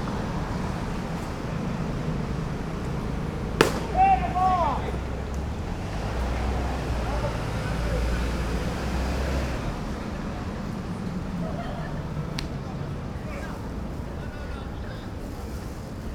Kinshi, Sumida-ku, Tōkyō-to, Japonia - baseball practice
baseball practice (roland r-07)